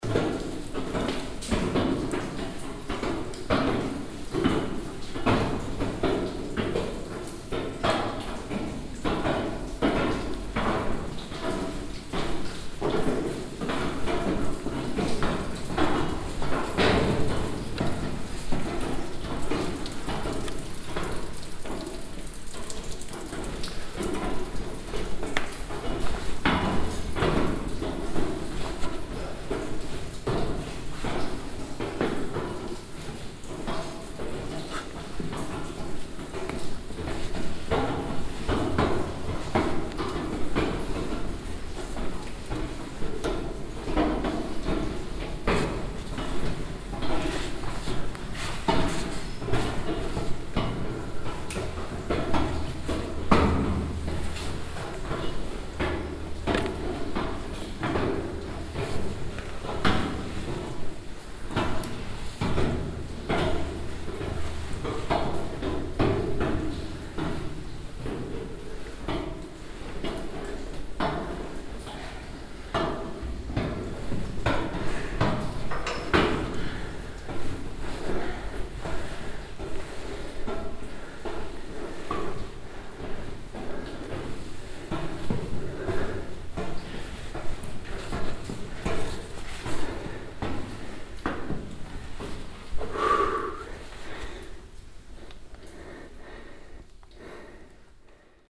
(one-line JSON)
{"title": "stolzembourg, old copper mine, exit", "date": "2011-08-09 22:45:00", "description": "Climbing up a metal ladder construction to the exit of the mine. The sound of the steps and my heavy breathing.\nStolzemburg, alte Kupfermine, Ausgang\nAufstieg an einer Metalleiter zum Ausgang der Mine. Das Geräusch der Schritte und mein heftiger Atem.\nStolzembourg, ancienne mine de cuivre, sortie\nEscalade d’une échelle en métal vers la sortie de la mine. Le bruit des pas et de ma respiration intense.\nProject - Klangraum Our - topographic field recordings, sound objects and social ambiences", "latitude": "49.97", "longitude": "6.16", "timezone": "Europe/Luxembourg"}